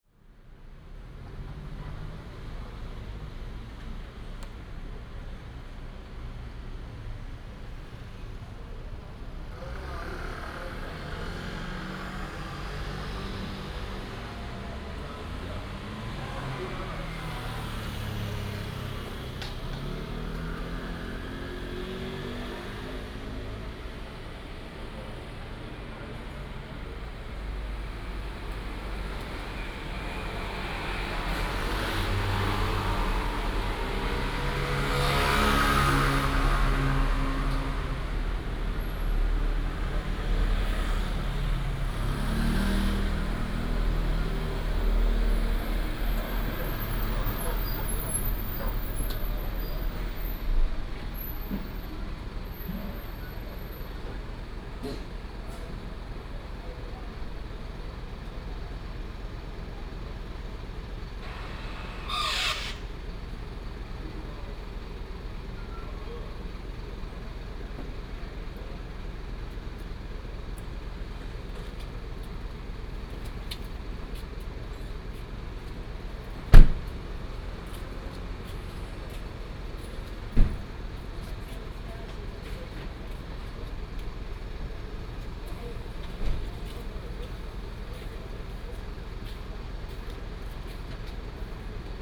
Night outside the convenience store, Late night street, Traffic sound, Seafood Restaurant Vendor, Truck unloading
Binaural recordings, Sony PCM D100+ Soundman OKM II
Donggang Township, Pingtung County, Taiwan